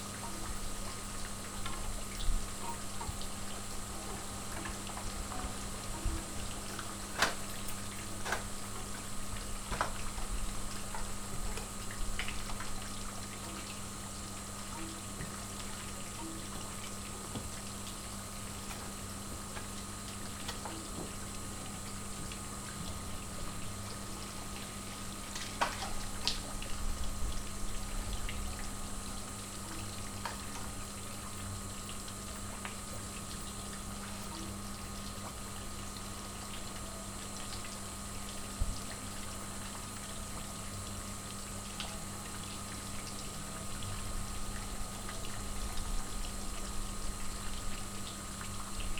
Sasino, summerhouse at Malinowa Road, yard - lazy fountain
lazy trickle of the fountain and a buzzing pump supplying the flow at the neighboring house.